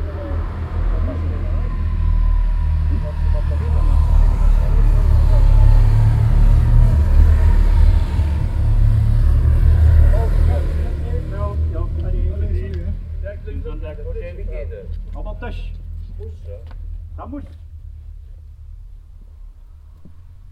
At a house on the opposite street side of the church. A group of uniformed man ringing the bell at the house and talk to the owner. Motorbikes pass by and the church bells start to ring.
Schlindermanderscheid, Haaptstrooss
Bei einem Haus gegenüber der Kirche. Eine Gruppe von uniformierten Männern betätigt die Klingel an der Tür und spricht mit dem Besitzer. Motorräder fahren vorbei und die Kirchenglocke beginnt zu läuten.
Schlindermanderscheid, Haaptstrooss
Dans la rue, près d’une maison de l’autre côté de l’église. Un groupe d’hommes en uniforme appuie sur la sonnette et discute avec le propriétaire. Des motards passent et les cloches de l’église commencent à sonner.
Bourscheid, Luxembourg